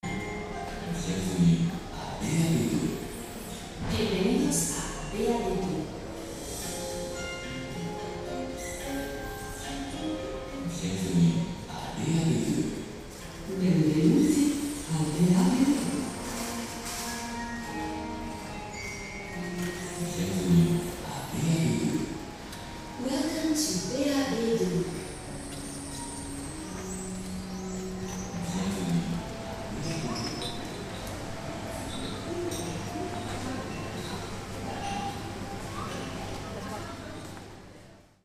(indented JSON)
{
  "title": "BAB 2 Anglet",
  "date": "2010-07-09 10:22:00",
  "description": "centre commercial, bienvenue, welcome, mall",
  "latitude": "43.49",
  "longitude": "-1.50",
  "altitude": "6",
  "timezone": "Europe/Paris"
}